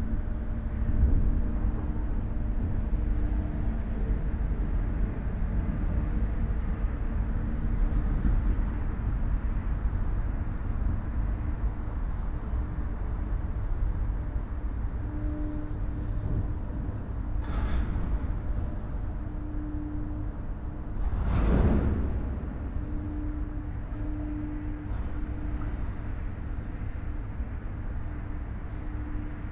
Mühlauhafen, Mannheim, Deutschland - Saturday morning in the harbour
A comparatively quit morning in the container harbour. Large container-harbour crane and associated machinery moving on the opposite side of the harbour basin. Containers being hauled on ships.The crane moving up and down along the quay. To the right motor vehicles passing over a bridge. Calls of Common Black-headed Gulls (Chroicocephalus ridibundus) can be heard calling as they fly around in the harbour. At 4 min in the recording the call of a Grey Heron (Ardea cinerea) and at 4 min 05 sec, the flight call of a migrating Tree Pipit (Anthus trivialis). Recorded with a Sound Devices 702 field recorder and a modified Crown - SASS setup incorporating two Sennheiser mkh 20